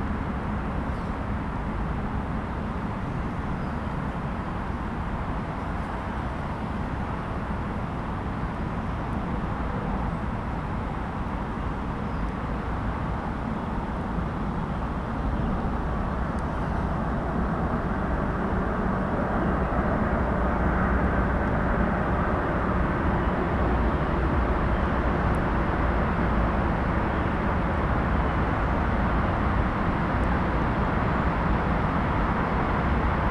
Heinz-Nixdorf-Ring, Paderborn, Deutschland - Hoepperteich ueber Wasser
My ministry
for you
says the place
is this:
There is a nest
in the middle of
everything
and you can come and go
as you like
as long as you
cry out
to me.
Kreis Paderborn, Nordrhein-Westfalen, Deutschland, 15 July 2020